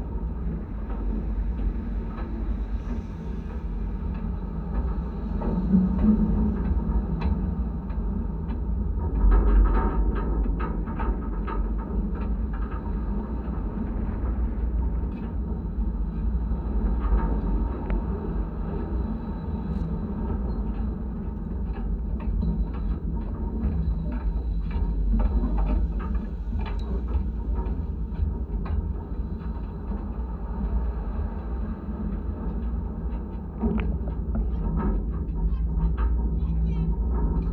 Faidherbe Bridge, Saint Louis, Senegal - Contact Mics on Faidherbe Bridge
Stereo contact mics on hand-railing of Faidherbe Bridge in Saint-Louis, Senegal. Contact mics by Jez Riley French, recorded with Zoom H4 recorder.
16 April 2013, 13:30